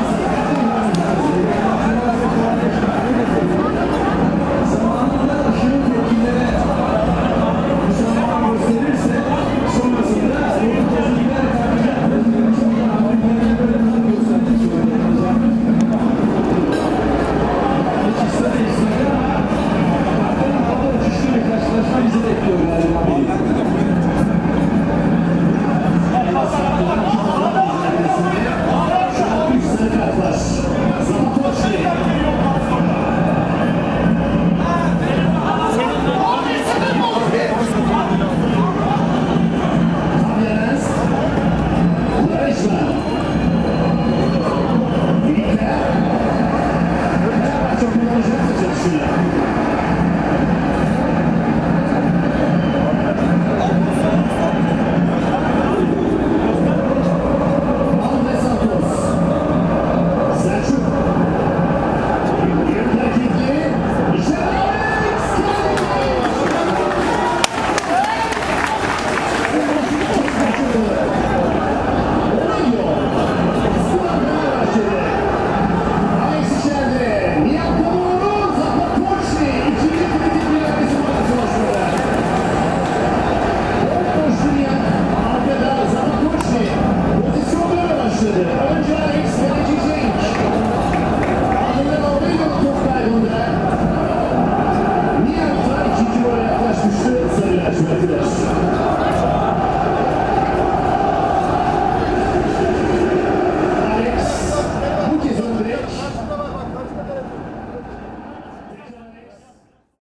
Istanbul, Besşiktaş, football fans watching the derby
Live broadcast of the football derby between Beşiktaş (this part of the city) and Fenerbahçe (other side of the Bophorus, Kadıköy). These locals support their team from this backyard, discussing a yellow card against Beşiktaş with the arbitrary on the tv screen.